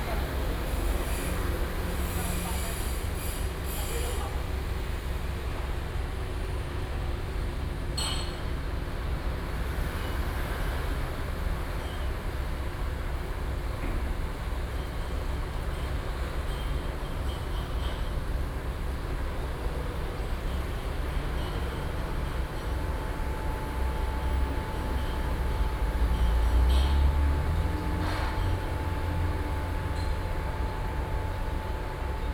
The rest of the ship-breaking yards, Sony PCM D50 + Soundman OKM II
24 June, ~15:00